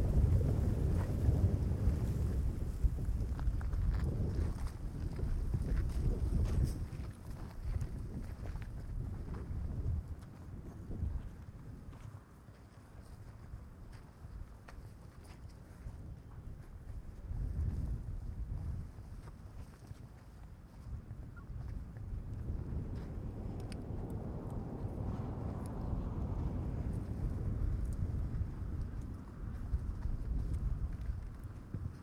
Al-Qahira, Ägypten - wind & stones
recorded during a soundArtWorkShop held by ludger hennig + rober rehnig @ GUC activityWeek 2012 with:
nissmah roshdy, amira el badry, amina shafik, sarah fouda, yomna farid, farah.saleh, alshiemaa rafik, yasmina reda, nermin mohab, nour abd elhameed
recording was made with:
2 x neumann km 184 (AB), sounddevice 722